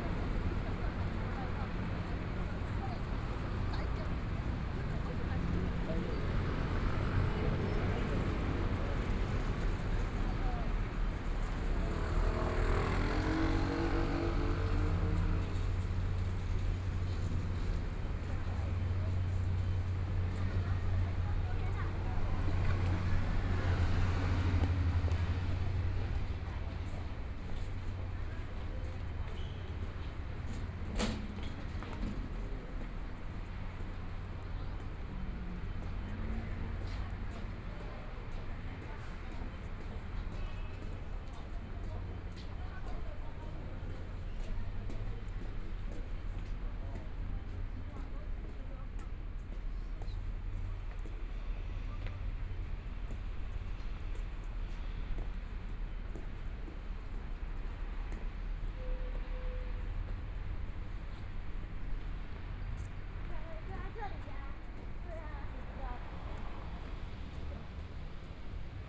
中山區桓安里, Taipei City - Walking across the different streets
Walking across the different streets, Traffic Sound, Market, Binaural recordings, ( Keep the volume slightly larger opening )Zoom H4n+ Soundman OKM II
Zhongshan District, Taipei City, Taiwan, 15 February 2014, ~14:00